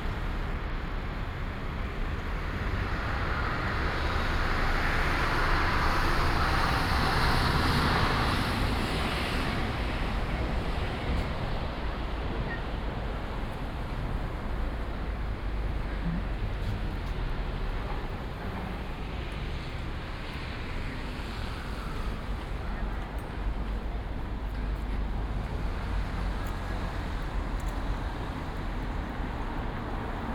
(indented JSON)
{
  "title": "Av. General Marvá, Alicante, Spain - (19 BI) Walk through a busy promende",
  "date": "2016-11-07 17:30:00",
  "description": "Binaural recording of a walk through General Marvá from Castel towards Marina.\nPlenty of traffic, bikes engines, buses, some fountains on the way, etc.\nRecorded with Soundman OKM + Zoom H2n",
  "latitude": "38.35",
  "longitude": "-0.49",
  "altitude": "46",
  "timezone": "Europe/Madrid"
}